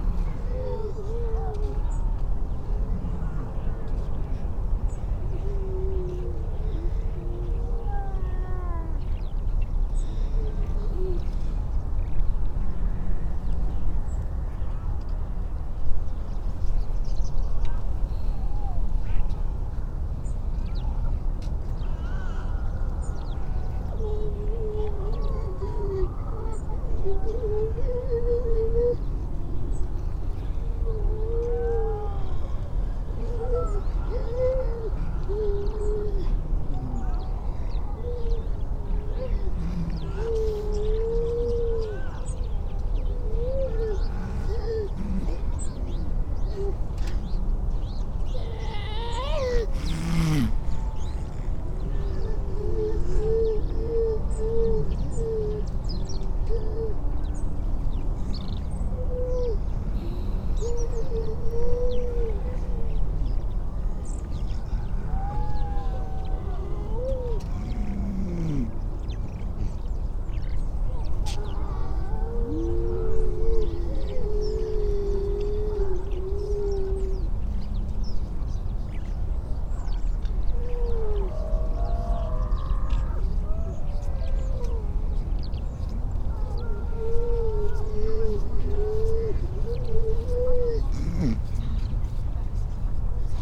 Unnamed Road, Louth, UK - grey seals ... donna nook ...
grey seals ... donna nook ... salt marsh where grey seals come to have their pups between oct-dec ... most calls from females and pups ... SASS ... bird calls from ... magpie ... brambling ... pipit ... pied wagtail ... skylark ... starling ... redshank ... curlew ... robin ... linnet ... crow ... wren ... dunnock ... every sort of background noise ... the public and creatures are separated by a fence ...
East Midlands, England, United Kingdom, 3 December 2019